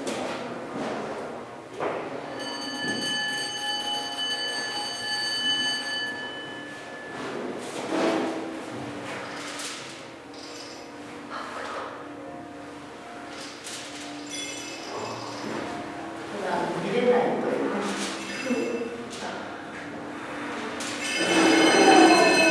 Refugehof, Leuven, Belgien - Leuven - Refugehof - Torentje - sound installation
At the Leuven Refugehof inside a small Pavillion - the sound of a sound installation by Rie Nakajima entitled "touching here and hearing it" - part of the sound art festival Hear/ Here in Leuven (B). The sound of small motor driven objecs and visitors.
international sound scapes & art sounds collecion